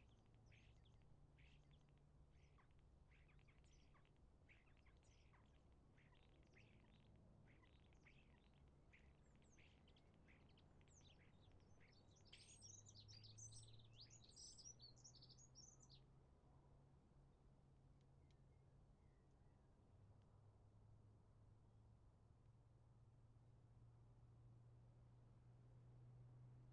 Orgerus, France - Posted nowhere, in field close to hedges
First week of spring in 2019.